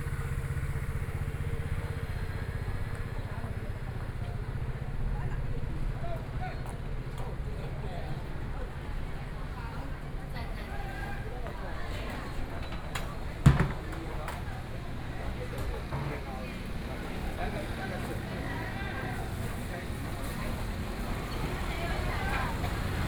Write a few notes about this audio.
Walking through the market, walking in the Street, Traffic Sound, To the east direction